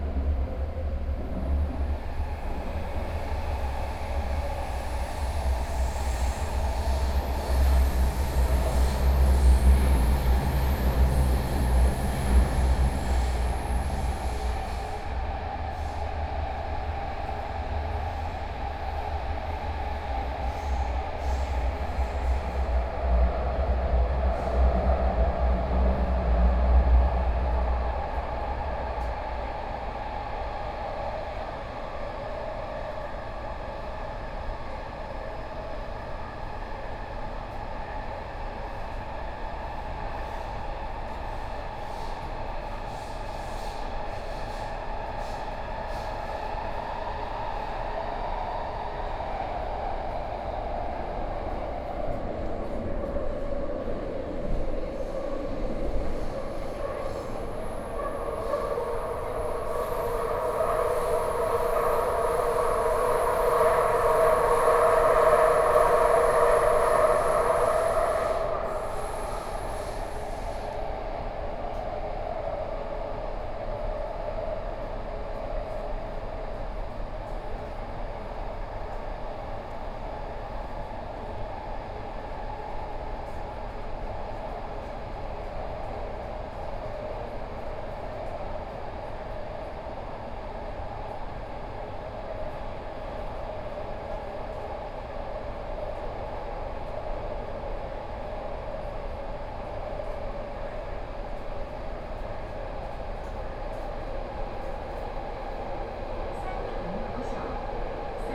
Sanchong District, New Taipei City - Luzhou Line
from Daqiaotou Station to Luzhou Station, Binaural recordings, Zoom H6+ Soundman OKM II